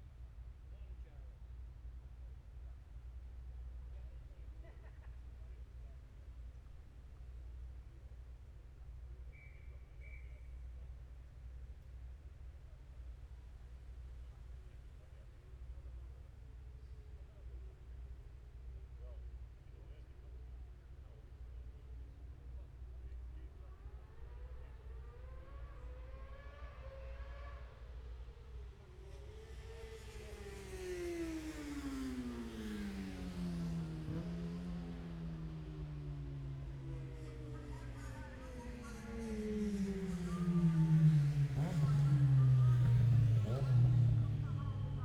Scarborough District, UK - Motorcycle Road Racing 2016 ... Gold Cup ...
600 cc odds practice ... Mere Hairpin ... Oliver's Mount ... Scarborough ... open lavalier mics clipped to baseball cap ...